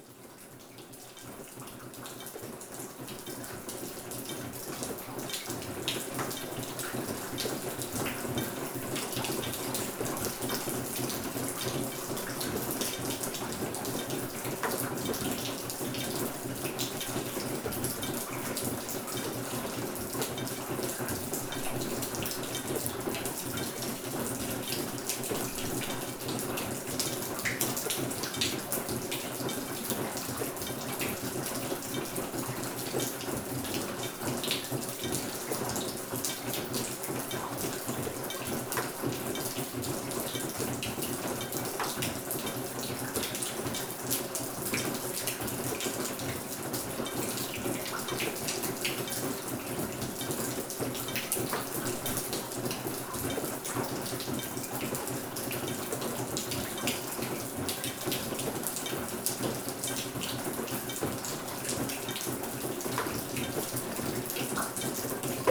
Largentière, France - Mining rain
In an underground silver mine, a tunel ambiance with mining rain.